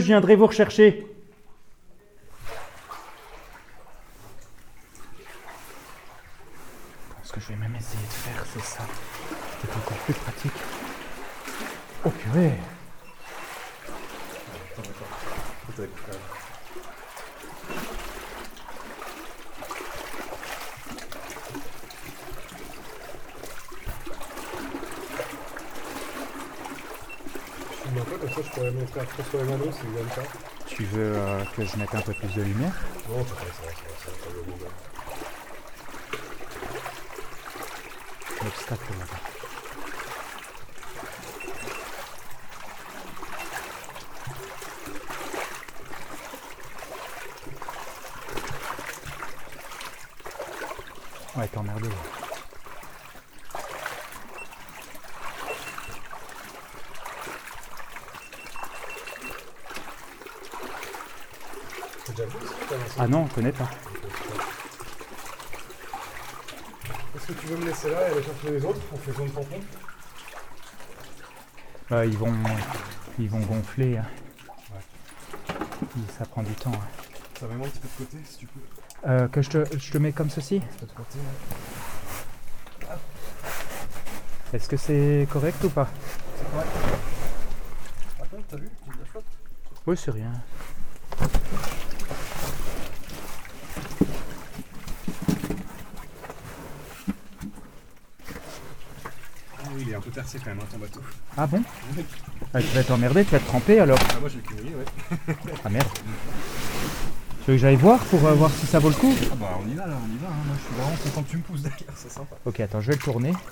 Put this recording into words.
We are exploring a flooded underground mine. In first, we cross a tunnel with few water (0:00 to 19:00 mn) and after we are going deep to the end of the mine with boats. It's a completely unknown place. The end is swimming in a deep cold 4 meters deep water, a quite dangerous activity. Because of harsh conditions, the recorder stopped recording. So unfortunately it's an incomplete recording.